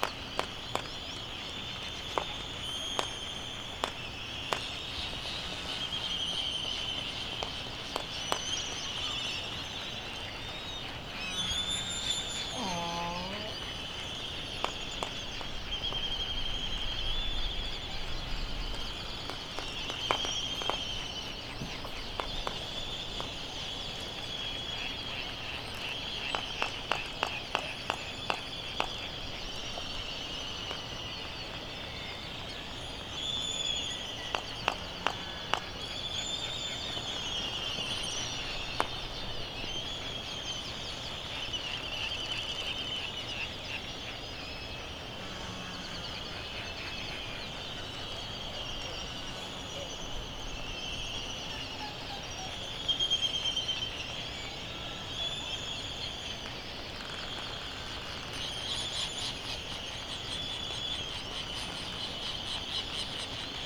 United States Minor Outlying Islands - Laysan albatross soundscape ...
Sand Island ... Midway Atoll ... open lavalier mics ... sometimes everything just kicked off ... this is one of those moments ... some birds may have been returning with food or an influx of youngsters ..? bird calls ... laysan albatross ... white tern ... bonin petrel ... black noddy ... canaries ... background noise ... traffic ... voices ...
16 March 2012, 18:35